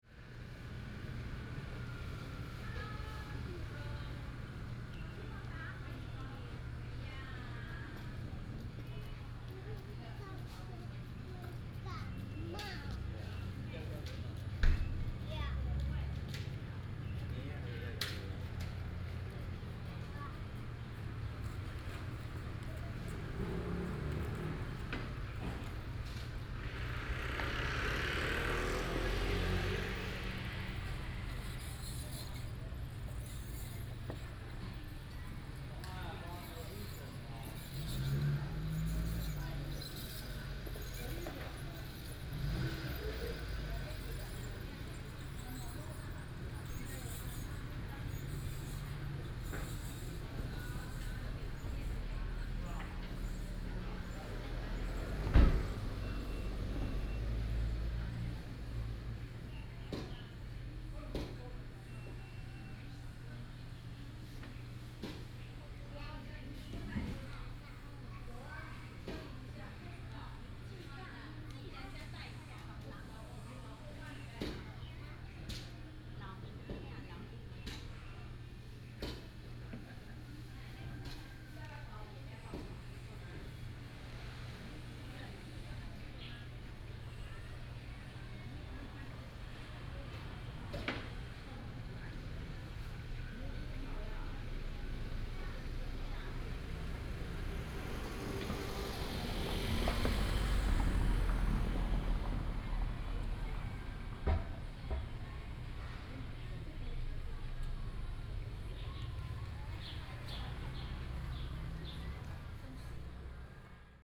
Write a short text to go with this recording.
Outside the market, Bird call, Small village, traffic sound, Chicken cry, Binaural recordings, Sony PCM D100+ Soundman OKM II